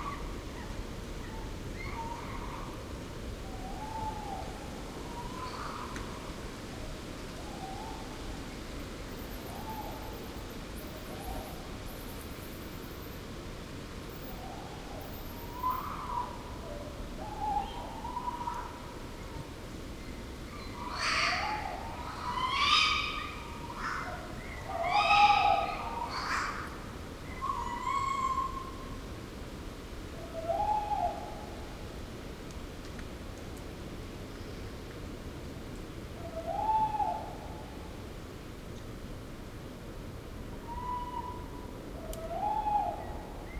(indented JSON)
{
  "title": "Negast forest, Schupperbaum, Rügen - Owl [Waldkauz] duette#2",
  "date": "2021-11-10 04:14:00",
  "description": "Owl (Waldkauz) couple (male/fem) in the woods - for daytime they split - at night they call and find each other\novernight recording with SD Mixpre II and Lewitt 540s in NOS setup",
  "latitude": "54.37",
  "longitude": "13.28",
  "altitude": "14",
  "timezone": "Europe/Berlin"
}